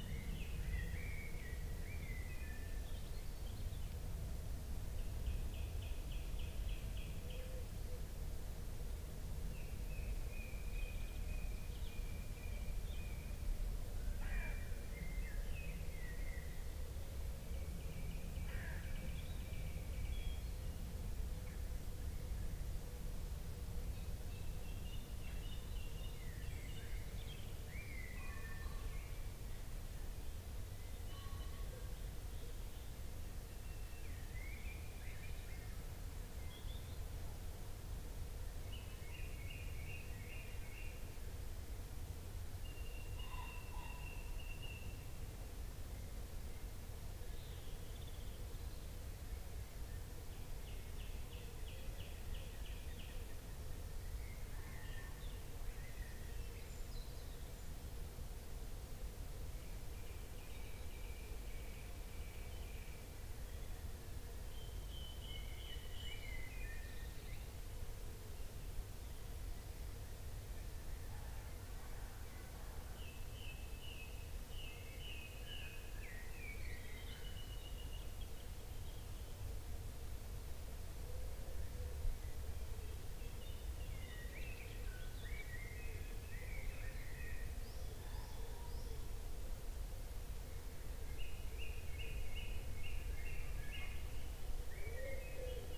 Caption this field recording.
Listening for 48 minutes in the forest exactly 10 miles due North of my house, for a friend's project, writing about what I heard as I sat there. The long rhythm of planes passing, the bustle of pheasants, the density of the air on a damp spring day with sunlight in the woods.